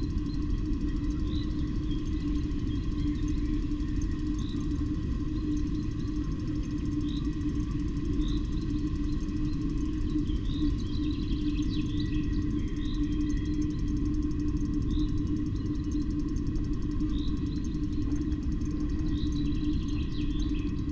small gulf, mariborski otok, river drava - glass bowls